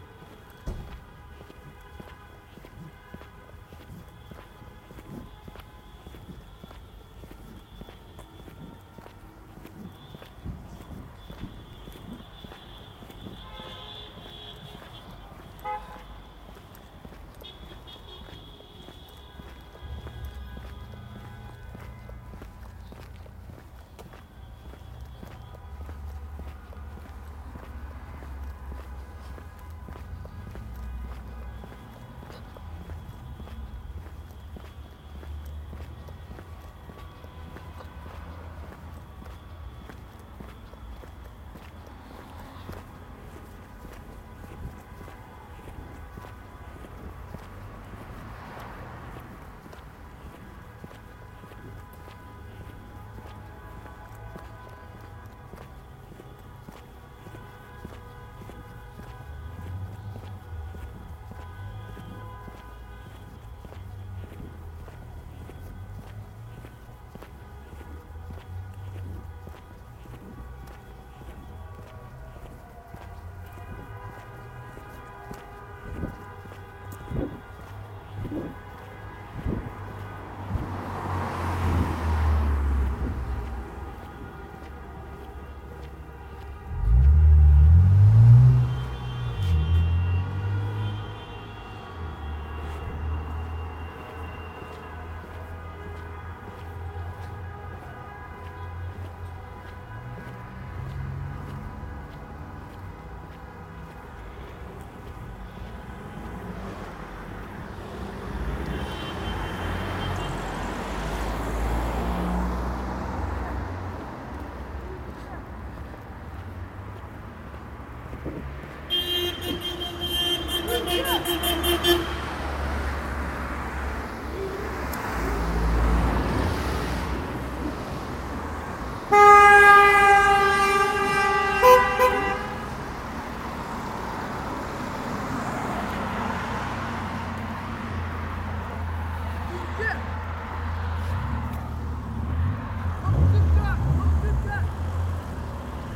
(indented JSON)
{
  "title": "st. gallen, turkish soccer fans celebrating",
  "description": "after soccer game, won 3:2 against czechoslovakia. turkish fans celebrating at about 11 p. m., cruising etc. recorded while walking in the street, june 15, 2008. - project: \"hasenbrot - a private sound diary\"",
  "latitude": "47.43",
  "longitude": "9.38",
  "altitude": "665",
  "timezone": "GMT+1"
}